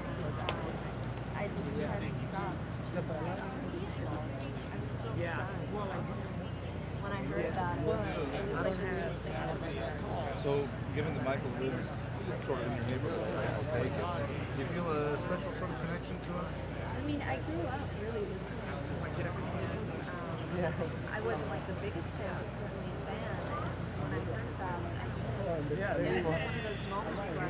Jackson Family Home, Michael Jackson Fan Interview, 7-26-09, Encino, CA